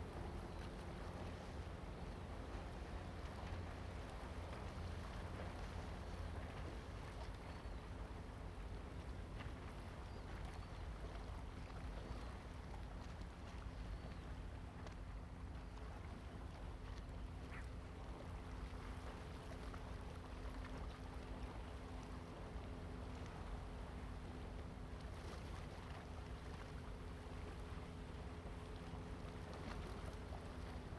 落日亭, Hsiao Liouciou Island - Waves and tides
On the coast, Wave and tidal
Zoom H2n MS+XY
Pingtung County, Taiwan, 2 November 2014, ~09:00